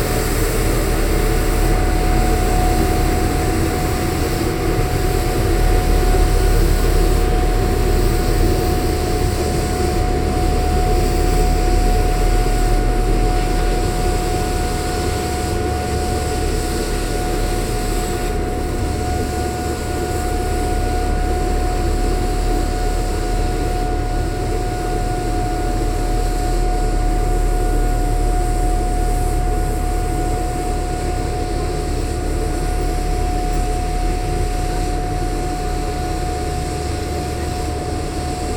Mitte, Berlin, Germany - schienenschleiffahrzeug
ein schienenschleiffahrzeug der berliner verkehrsgesellschaft faehrt vorbei.
a rails grinding vehicle of the berlin public transport company passing by.
automezzo del trasporto pubblico berlinese che trascina binari del tram.